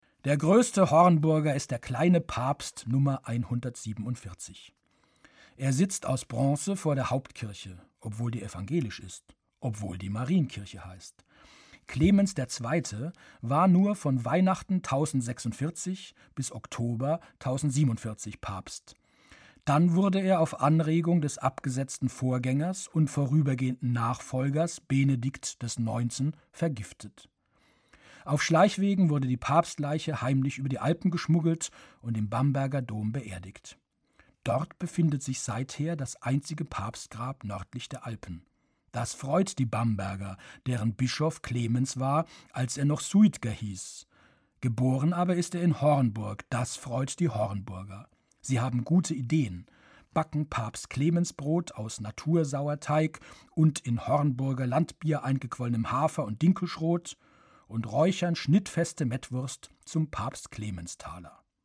hornburg - vor der kirche
Produktion: Deutschlandradio Kultur/Norddeutscher Rundfunk 2009
Hornburg, Germany